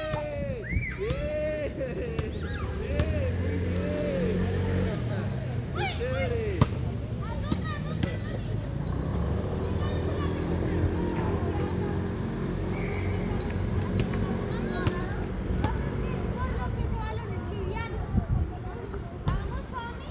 Cl. 4 Sur, Bogotá, Colombia - park at 3pm
Children and adolescents playing soccer while vehicles circulate in the surroundings